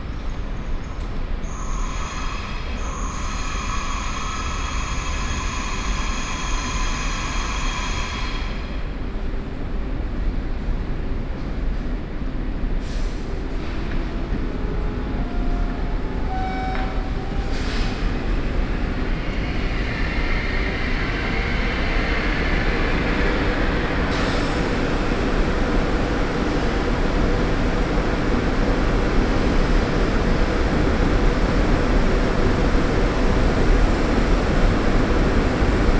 Bruxelles, gare du Midi, Voie 3 / brussels, Midi Station, platform 3. A symphony for trains and a lady speaking in the microphone.